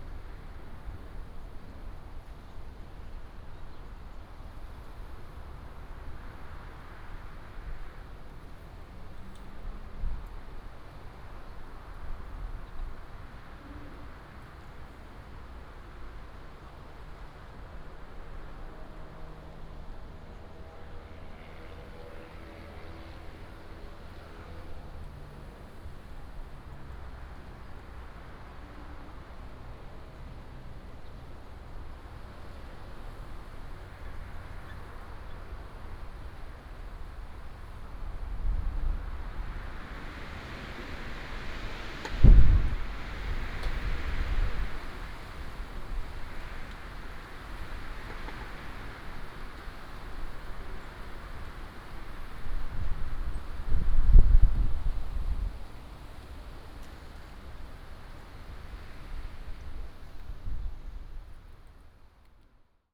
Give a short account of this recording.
wind, rest area, Binaural recordings, Sony PCM D100+ Soundman OKM II